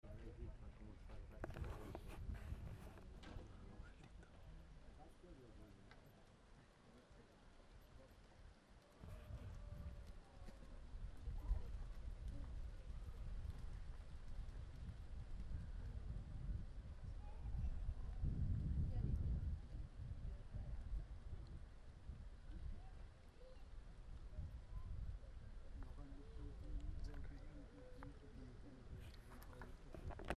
baby bird call pusti in Ivochote town
Soundscape. UNder the tree